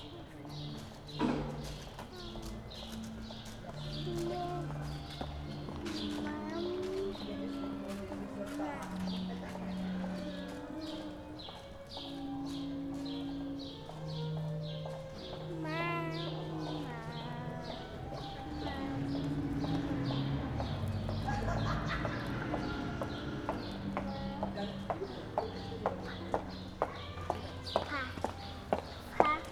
radio aporee - spring morning
warm spring morning, music from an open window, sounds and voices in the street, in front of the radio aporee headquarter